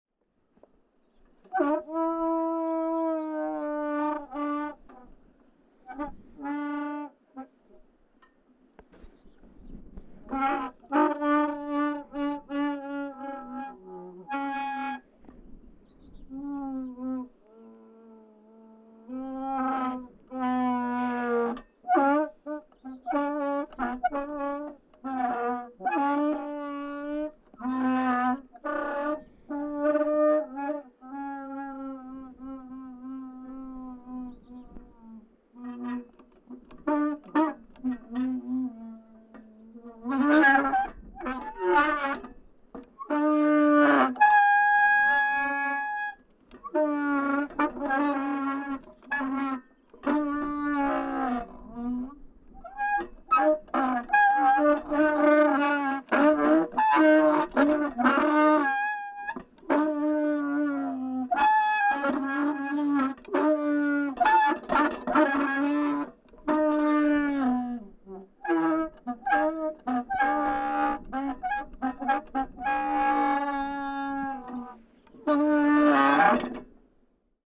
Sound of the mounting base of a telescope set up on the viewing platform of the "Otto Leege Pfad". The pivot bearings lacking lubricant. Recorded with an Olympus LS 12 Recorder